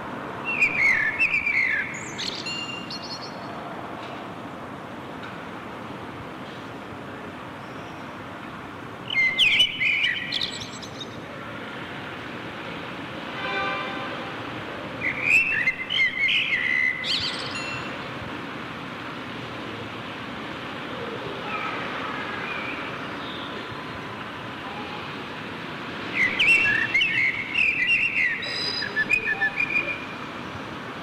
berlin, amsel - antwort von meinem bruder auf bonn altstadt, amsel, juni 2003